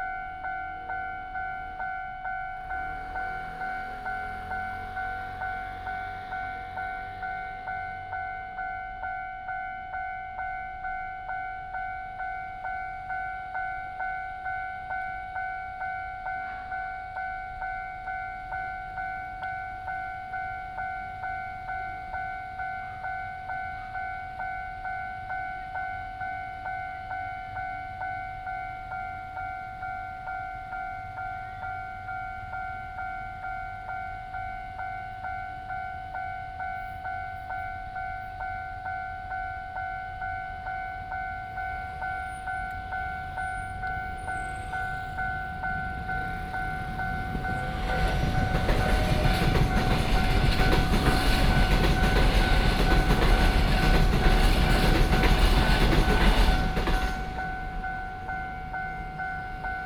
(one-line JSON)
{"title": "普義里, Zhongli Dist., Taoyuan City - Small railway crossroads", "date": "2017-08-20 17:24:00", "description": "Small railway crossroads, traffic sound, The train runs through", "latitude": "24.96", "longitude": "121.24", "altitude": "138", "timezone": "Asia/Taipei"}